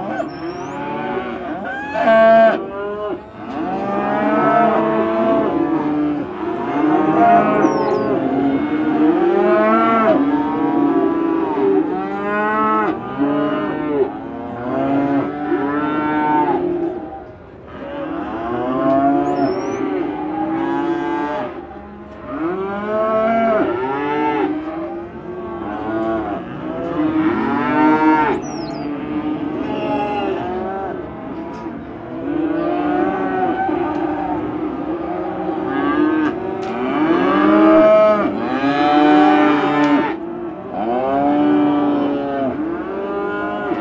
Cattle Market, St Joseph, MO, USA - Cows mooing in a stockyard in St Joseph, Missouri, USA.
Hundreds of cows mooing outside a cattle market, waiting in a stockyard for be sale and sent to some feedlot (for most of them). Sound recorded by a MS setup Schoeps CCM41+CCM8 Sound Devices 788T recorder with CL8 MS is encoded in STEREO Left-Right recorded in may 2013 in St Joseph, Missouri, USA.